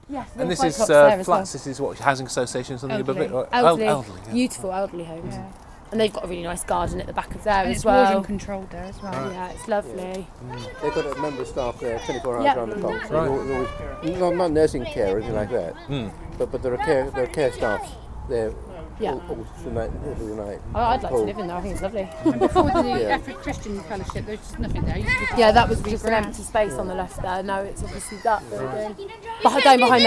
Efford Walk Two: Old folks home - Old folks home